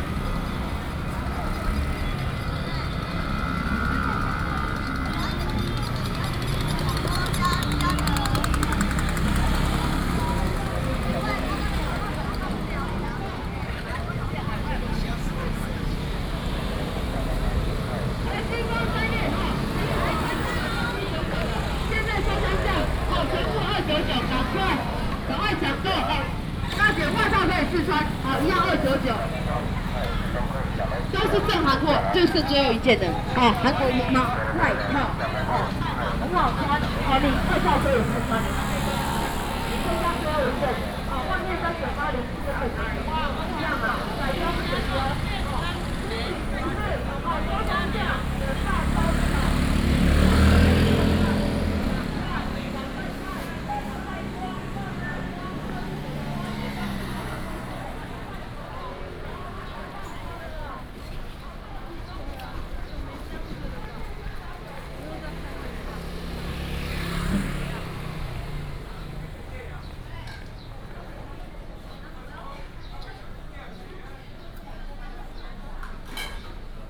Zhongshan Rd., Dalin Township - Walking in the street
Walking in the traditional market area, lunar New Year, traffic sound, vendors peddling
Binaural recordings, Sony PCM D100+ Soundman OKM II